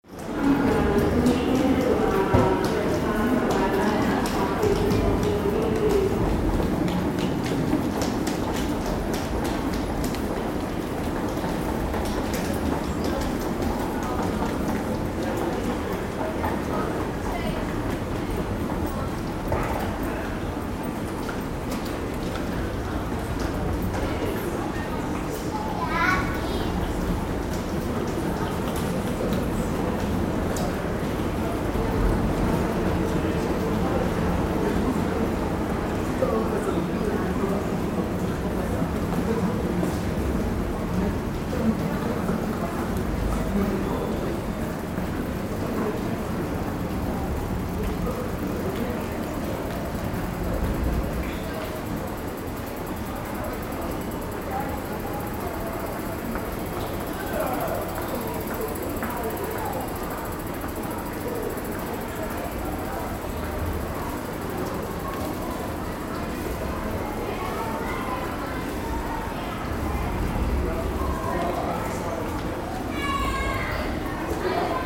mannheim main station, pedestrian underpass
recorded june 28th, 2008, around 10 p. m.
project: "hasenbrot - a private sound diary"
Mannheim, Germany